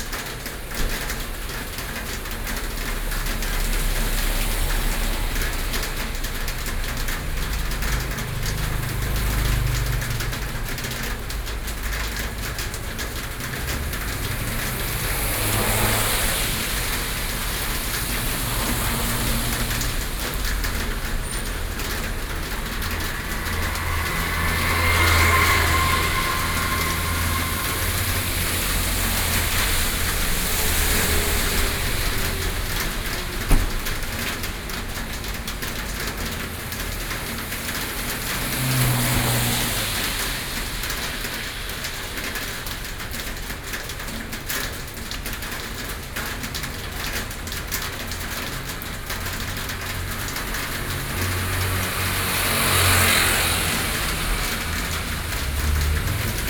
Xinxing Rd., Beitou Dist., Taipei City - Rainy Day